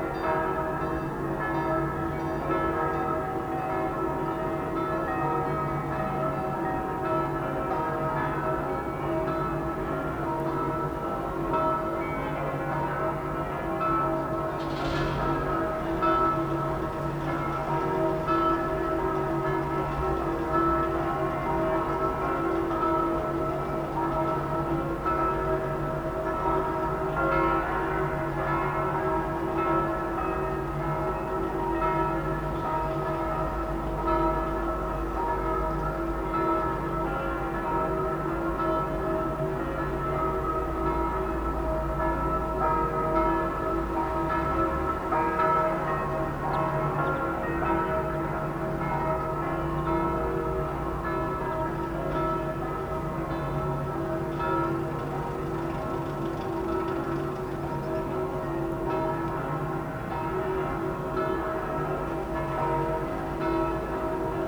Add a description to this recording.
Combination of Bells ringing on a Sunday at noon